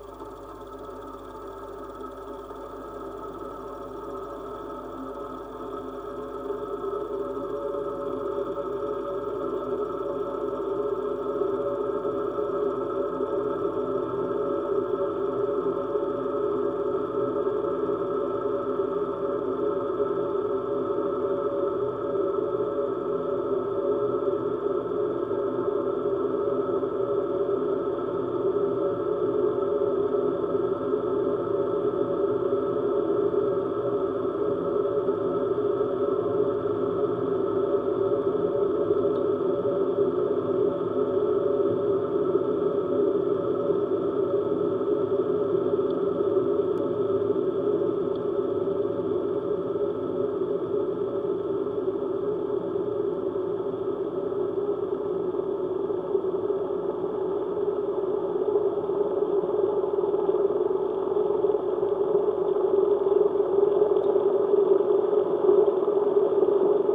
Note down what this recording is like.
While I was cooking eggs at home, I had fun when I put a contact microphone on the pan. Dancing eggs beginning at 2:45 mn !